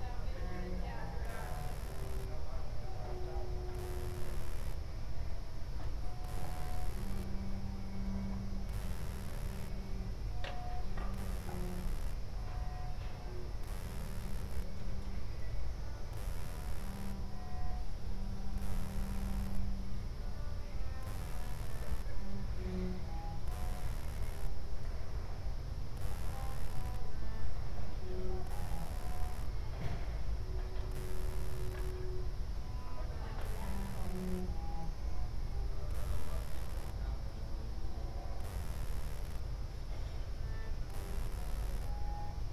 Ascolto il tuo cuore, città. I listen to your heart, city. Several chapters **SCROLL DOWN FOR ALL RECORDINGS** - Summer afternoon with cello in background in the time of COVID19 Soundscape
"Summer afternoon with cello in background in the time of COVID19" Soundscape
Chapter CXV of Ascolto il tuo cuore, città. I listen to your heart, city
Tuesday, July 7th 2020, one hundred-nineteen day after (but day sixty-five of Phase II and day fifty-two of Phase IIB and day forty-six of Phase IIC and day 23rd of Phase III) of emergency disposition due to the epidemic of COVID19.
Start at 7:31 p.m. end at 8:21 a.m. duration of recording 50’00”